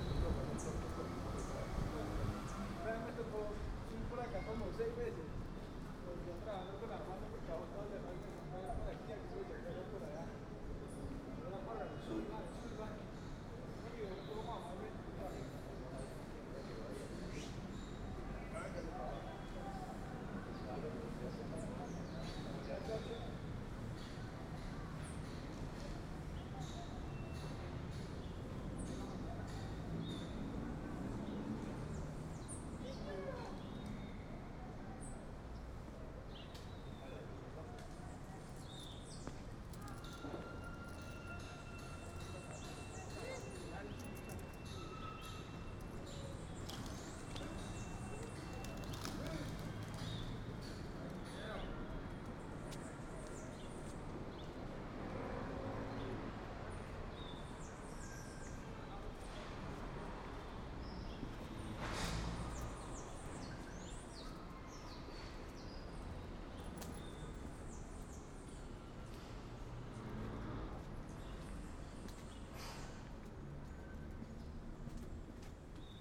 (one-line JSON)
{"title": "Ibagué, Ibagué, Tolima, Colombia - Ibagué deriva sonora01", "date": "2014-11-14 09:42:00", "description": "Ejercicio de deriva sonora por el centro de Ibagué.\nPunto de partida: Hotel Ambalá\nSoundwalk excercise throughout Ibagué's dowtown.\nEquipment:\nZoom h2n stereo mics Primo 172.\nTechnique: XY", "latitude": "4.44", "longitude": "-75.24", "altitude": "1285", "timezone": "America/Bogota"}